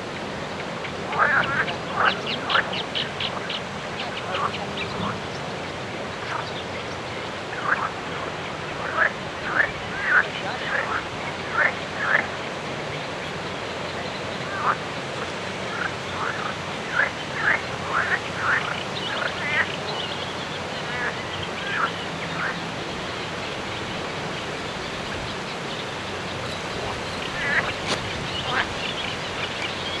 Ptasi Raj, Gdańsk, Poland - Grobla żaby / frogs
Grobla żaby / frogs rec. Rafał Kołacki